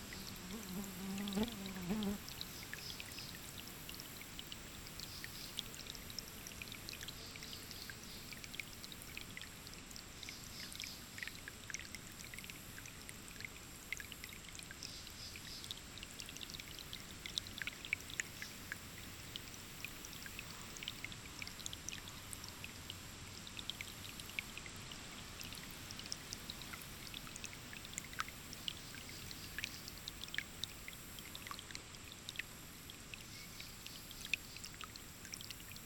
Faris Caves, Kanopolis, Kansas - Outside the Caves
About six feet from the entrance to the center cave, a rivulet runs past. A fly buzzes nearby a couple of times. Birds, wind and cicadas can also be heard from outside. Stereo mics (Audiotalaia-Primo ECM 172), recorded via Olympus LS-10.
KS, USA, 3 September 2017